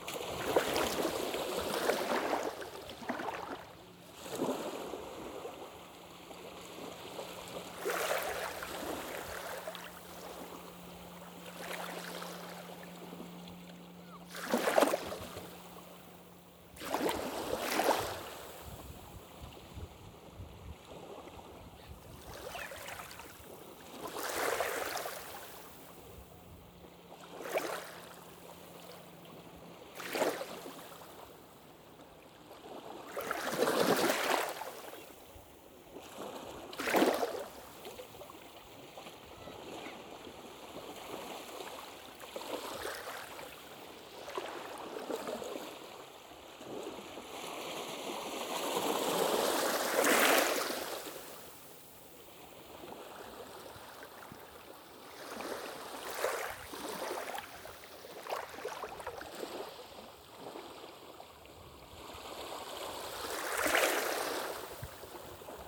Recording of the sea during one hour on the whales beach. At the beginning, teenagers are loudly playing. Just after I move on the right, behind big rocks. It's low tide. Waves are small, ambiance is quiet. Young children are playing on the beach or in the water. On the distant whales beacon, a storm thuds.